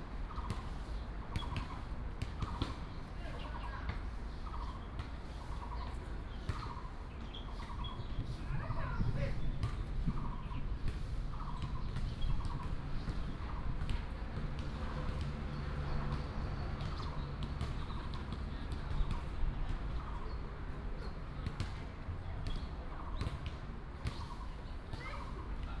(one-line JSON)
{"title": "中壢藝術園區, Zhongli Dist., Taoyuan City - in the Park", "date": "2017-08-02 15:19:00", "description": "in the Park, Bird call, play basketball, Footsteps, traffic sound", "latitude": "24.97", "longitude": "121.23", "altitude": "123", "timezone": "Asia/Taipei"}